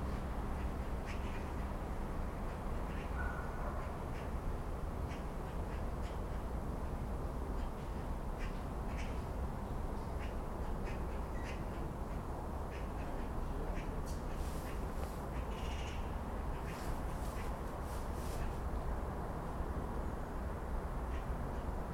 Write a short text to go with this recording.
magpies in the high trees of the cemetary in Dablice disctric, 27 December 2009